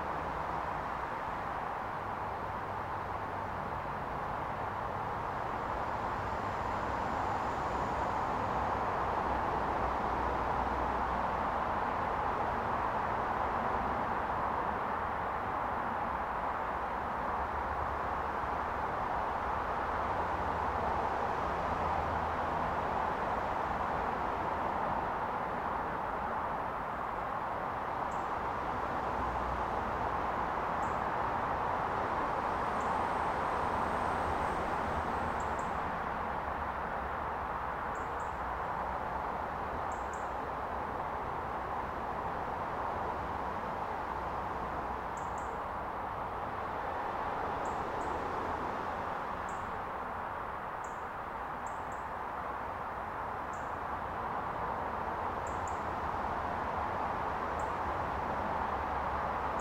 This is the sound of the N11 motorway and the birds in the trees, at the site where a road-protest was once en-camped. You can hear the traffic, the stream has dried up at this place (though it is still burbling away further down the valley). This was once a place where people gathered in 1997 live in and protect the nature reserve from a road-expansion project. Recorded with the EDIROL R09, sat at a picnic bench, listening to dog-walkers leaving in their cars, to the traffic on the main road, and the quietness of the trees themselves.
Co. Wicklow, Ireland